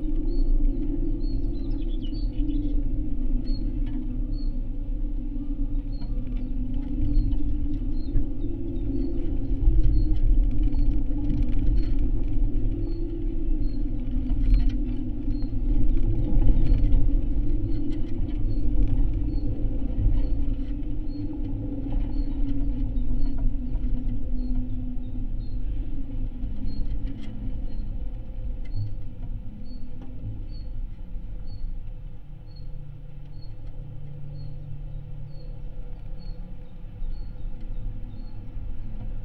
Utena, Lithuania, elctricity pole
contact microphones on metallic elements of abandoned electricity pole
Utenos apskritis, Lietuva, 24 June, ~18:00